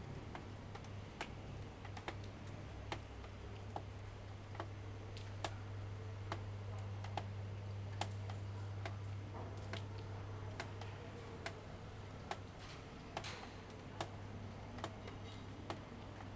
Ascolto il tuo cuore, città, I listen to your heart, city. Several chapters **SCROLL DOWN FOR ALL RECORDINGS** - Three ambiances April 27th in the time of COVID19 Soundscape
"Three ambiances April 27th in the time of COVID19" Soundscape
Chapter LVIII of Ascolto il tuo cuore, città. I listen to your heart, city
Monday April 27th 2020. Fixed position on an internal terrace at San Salvario district Turin, forty eight days after emergency disposition due to the epidemic of COVID19.
Three recording realized at 11:00 a.m., 6:00 p.m. and 10:00 p.m. each one of 4’33”, in the frame of the project (R)ears window METS Cuneo Conservatory) (and maybe Les ambiances des espaces publics en temps de Coronavirus et de confinement, CRESSON-Grenoble) research activity. Similar was on April 25th
The three audio samplings are assembled here in a single audio file in chronological sequence, separated by 7'' of silence. Total duration: 13’53”